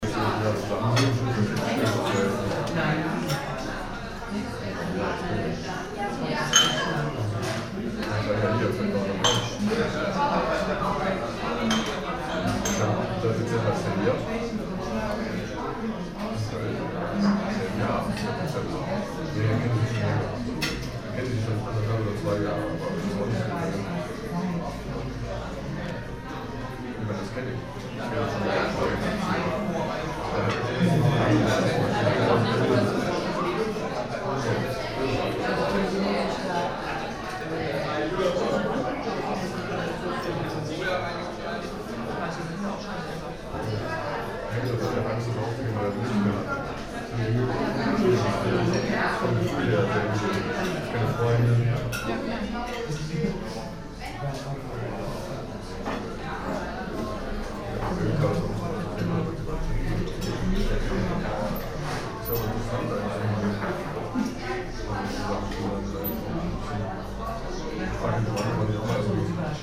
cologne, mainzer str, mainzer hof
inside the mainzer hof restaurant on an early evening
soundmap nrw: social ambiences/ listen to the people in & outdoor topographic field recordings